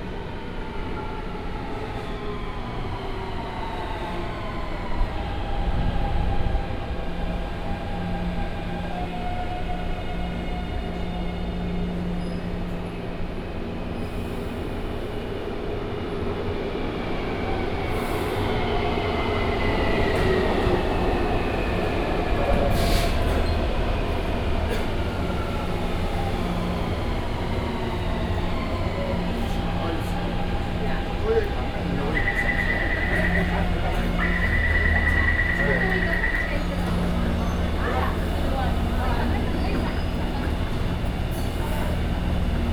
Sun Yat-sen Memorial Hall Station - soundwalk
From the underground passage into the MRT station, Sony PCM D50 + Soundman OKM II
Xinyi District, Taipei City, Taiwan